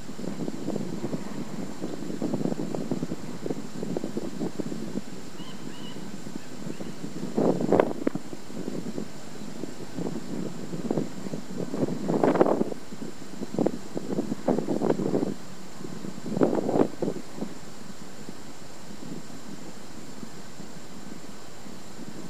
Sankey Valley Park, Old Hall. - Wind Underneath A Viaduct At 5am
The Wind underneath the Liverpool - Manchester train line viaduct in Sankey Valley Park, Old Hall, Warrington.
Warrington, UK